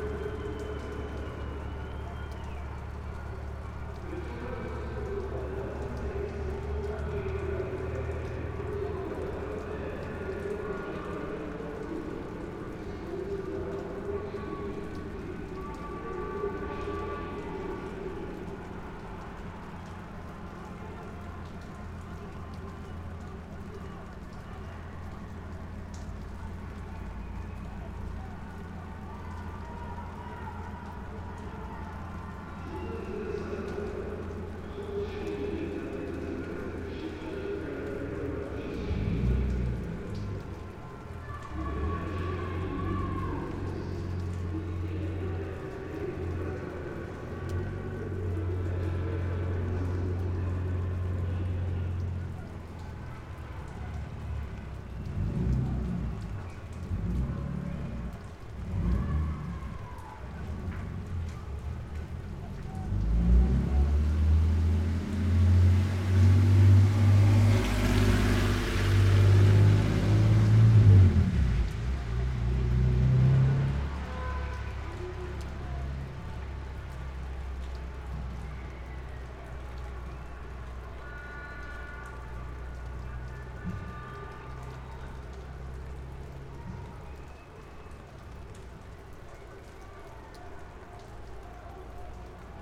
Slava Metreveli St, T'bilisi, Georgia - Rugby at Tbilisi Dinamo Stadium
Georgia national rugby team is winning Europe Championship 2022. It is raining and drizzling.
External perspective of the stadium.
IRT Cross, AE5100, Zoom F6.
20 March 2022, 19:30